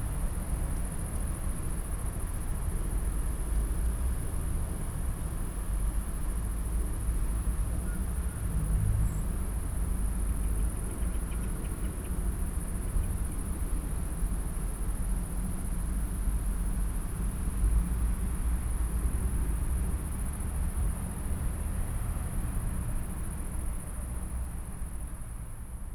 Bergiusstr., Neukölln, Berlin - ambience, traffic hum and crickets
Bergiusstr., Neukölln, Berlin, the road is closed. distant traffic hum, crickets and a light breeze in poplar trees, barely audible, an angry young man.
Sonic exploration of areas affected by the planned federal motorway A100, Berlin.
(Sony PCM D50, DPA4060)